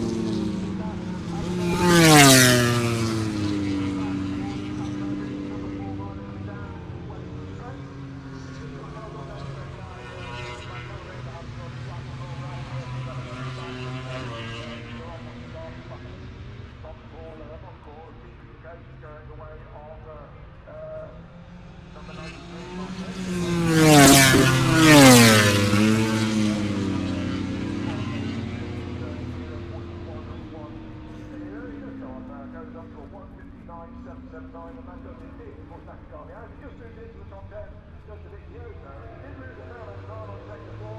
Silverstone Circuit, Towcester, UK - british motorcycle grand prix 2019 ... moto grand prix ... fp3 contd ...
british motorcycle grand prix 2019 ... moto grand prix ... free practice four contd ... maggotts ... lavaliers clipped to bag ... background noise ...